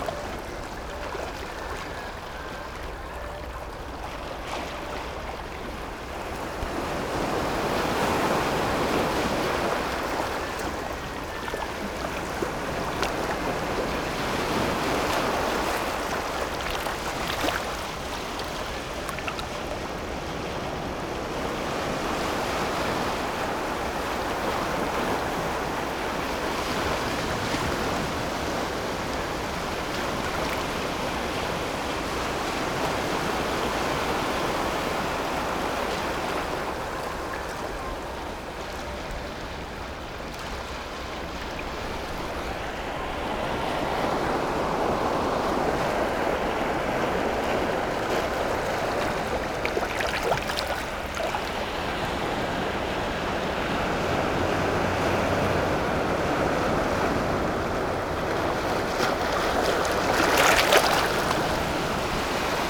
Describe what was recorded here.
Waves, Behind the restaurant music, Sony PCM D50 + Soundman OKM II